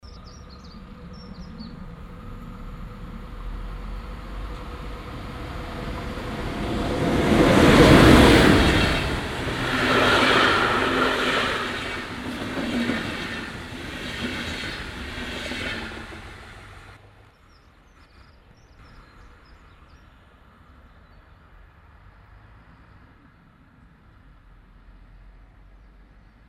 haan, brücke flurstrasse, zugverkehr
zugausfahrt, nachmittags
- soundmap nrw
project: social ambiences/ listen to the people - in & outdoor nearfield recordings
brücke flurstrasse an der bahnlinie